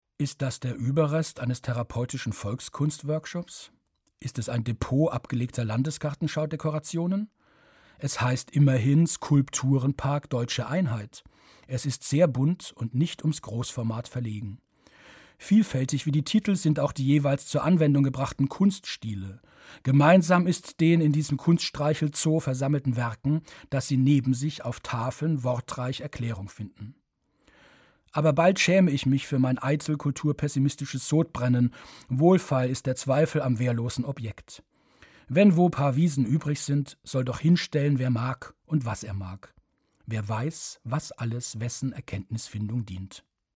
Produktion: Deutschlandradio Kultur/Norddeutscher Rundfunk 2009